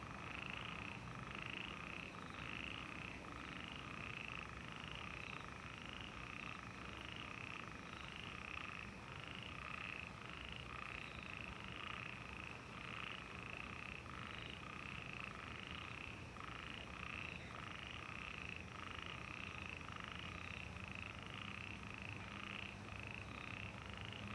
TaoMi, 埔里鎮 Nantou County - Frogs chirping
Frogs chirping
Zoom H2n MS+XY
Nantou County, Puli Township, 桃米巷52-12號, 26 March 2016